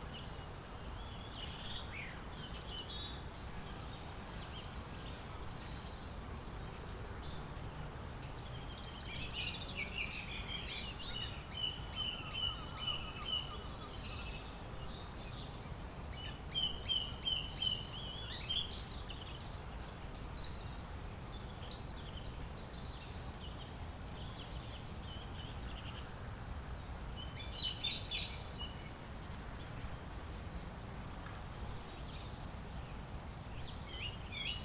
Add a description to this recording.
On the Broad Walk in Regent's Park, London. Birds, runners, planes above, a fountain in the distance.